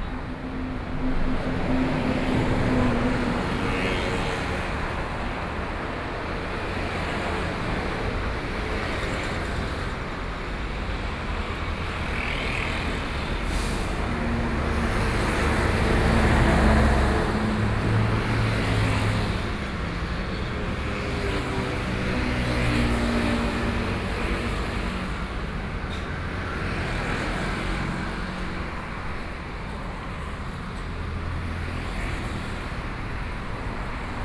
Sec., Zhonghua Rd., Neili - traffic noise
Through a variety of vehicle, Sony Pcm D50+ Soundman OKM II